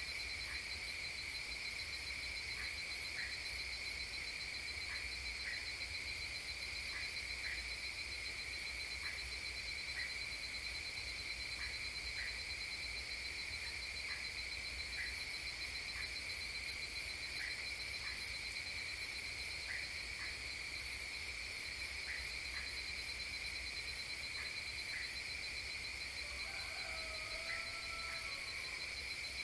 Cl., La Mesa, Cundinamarca, Colombia - Dawn at La Mesa 4 AM
One of the characteristics of La Mesa (Cundinamarca) is that it is a place with many green areas that allow to host an infinity of insects and small animals that allow us to live sound experiences at night that make us feel as if we were in the middle of the Colombian fields. At night, the murmur generated by the song and the nocturnal activities of the insects allow a pleasant background of fundamental sounds to be had in the background. Adding to this, we find the sound of crickets contributing their share in the sound signals of the place. And to close with great originality, the singing of the frogs, accompanied by moments of the crowing of the rooster at dawn, comes, this to form a good sound mark.
Tape recorder: Olympus DIGITAL VOICE RECORDER WS-852
Cundinamarca, Región Andina, Colombia, 11 May